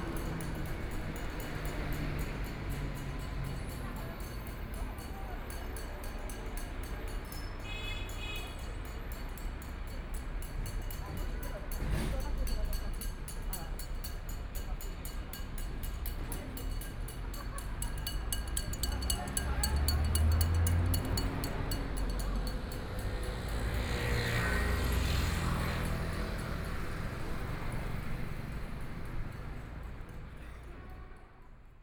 Trumpet, Riding a bicycle bells do recycle, The crowd, Bicycle brake sound, Traffic Sound, Binaural recording, Zoom H6+ Soundman OKM II